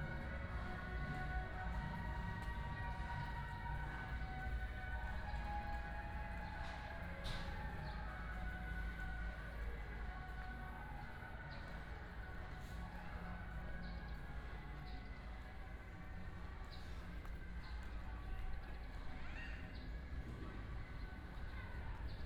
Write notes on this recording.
Walking in the old alley, temple fair